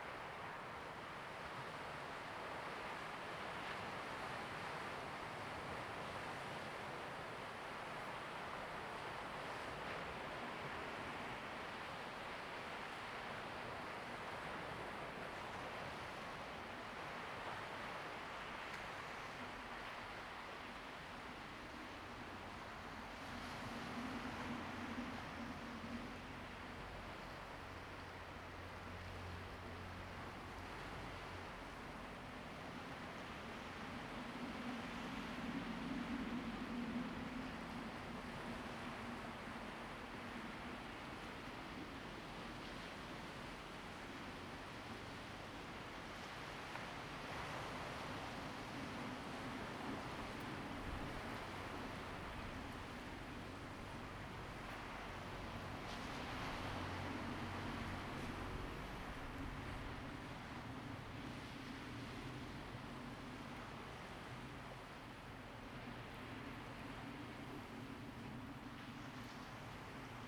Dabaisha Diving Area, Lüdao Township - At the beach
At the beach, sound of the waves
Zoom H2n MS +XY
30 October 2014, 14:52